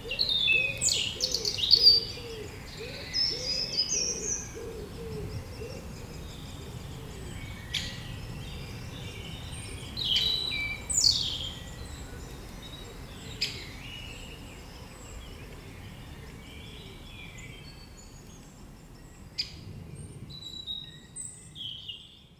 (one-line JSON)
{"title": "Lasne, Belgique - In the woods", "date": "2017-05-21 17:00:00", "description": "Recording of the birds into the urban woods of Belgium. The reality is that there's no real forest in Belgium, it's only trees, grass, leaves : in a nutshell, these objects scattered in an extremely urbanized landfield. Because of this pressure, pollution is considerable : the cars, the trains, but also and especially the intolerable airplanes. It's interesting to record the Belgian forest, as a sonic testimony of aggression on the natural environment. This explains why this sound is called \"the woods\" rather than \"the forest\".\nBirds are the European Robin, the Great Spotted Woodpecker, and the regular chip-chip-chip-chip are very young Great Spotted Woodpecker. At the back, European Green Woodpecker. Also the sometimes \"teetooteedoodzzii\" are Short-toed Treecreeper.", "latitude": "50.70", "longitude": "4.52", "altitude": "109", "timezone": "Europe/Brussels"}